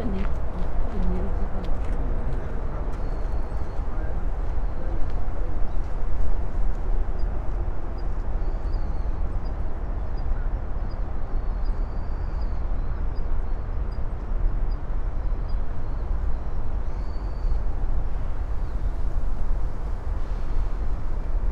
kyu shiba-rikyu gardens, tokyo - birds